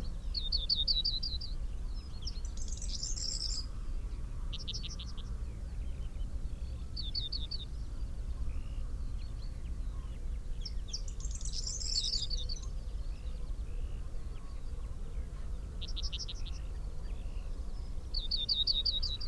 Green Ln, Malton, UK - corn bunting ... song ...
corn bunting ... song ... xkr SASS to Zoom H5 ... bird call ... song ... from pheasant ... dunnock ... chaffinch ... crow ... wood pigeon ... skylark ... taken from unattended extended unedited recording ...
England, United Kingdom, 14 April 2021, ~08:00